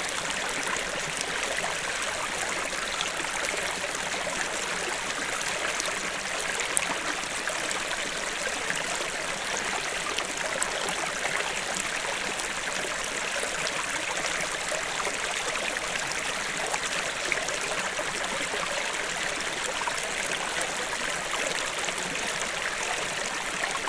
hoscheid, small stream schlänner
A second recording of the small stream Schlänner, here at a different place in the valley.
Hoscheid, kleiner Fluss Schlänner
Eine zweite Aufnahme vom kleinen Fluss Schlänner, hier an einer anderen Stelle des Tals.
Hoscheid, petit ruisseau Schlänner
Un deuxième enregistrement du petit ruisseau Schlänner, pris à un autre endroit dans la vallée.
Projekt - Klangraum Our - topographic field recordings, sound objects and social ambiences
Luxembourg